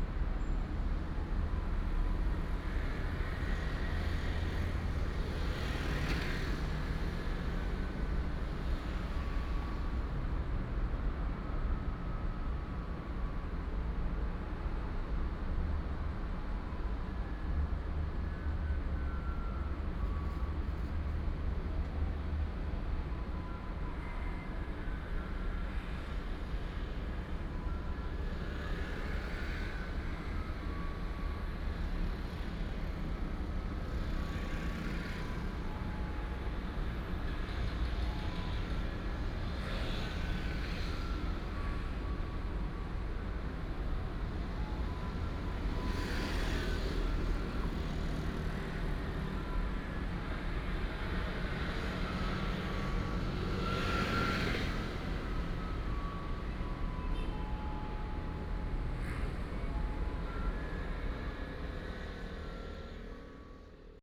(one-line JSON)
{"title": "空軍十村, Hsinchu City - PARKING LOT", "date": "2017-09-19 18:18:00", "description": "Off hours, The garbage truck arrives at the sound, Formerly from the Chinese army moved to Taiwans residence, Binaural recordings, Sony PCM D100+ Soundman OKM II", "latitude": "24.81", "longitude": "120.97", "altitude": "21", "timezone": "Asia/Taipei"}